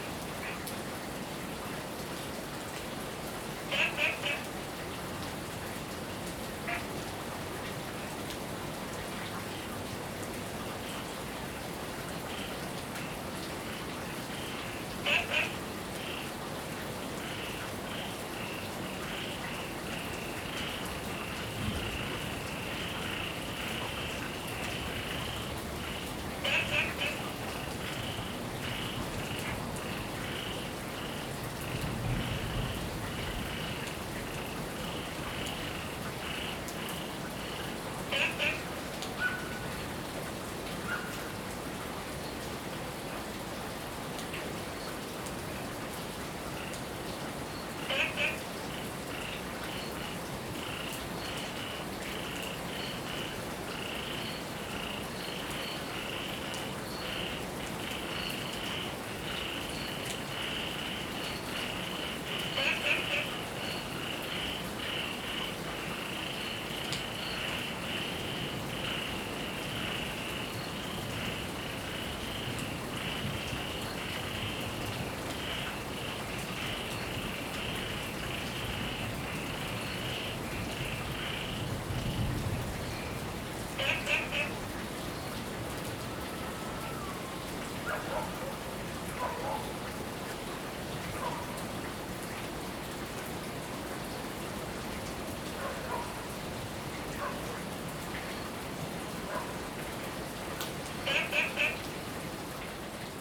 樹蛙亭, 埔里鎮桃米里 - Frog and rain
Frog chirping, Heavy rain
Zoom H2n MS+ XY
Puli Township, 桃米巷29-6號, 12 August 2015, 19:18